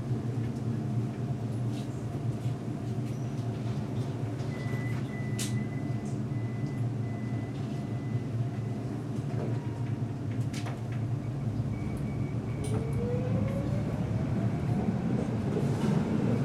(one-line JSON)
{"title": "Tram, Brussel, Belgium - Tram 51 between Parvis de Saint-Gilles and Porte de Flandre", "date": "2022-05-23 09:21:00", "description": "Underground until Lemonnier Station.\nTech Note : Olympus LS5 internal microphones.", "latitude": "50.84", "longitude": "4.34", "altitude": "23", "timezone": "Europe/Brussels"}